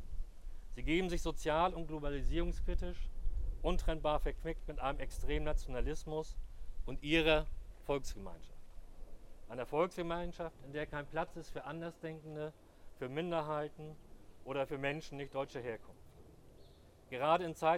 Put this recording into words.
Rede von Olaf Harms, Mitglied der Fraktion Die Linke, Bezirksversammlung Hamburg-Mitte, Vorsitzender der DKP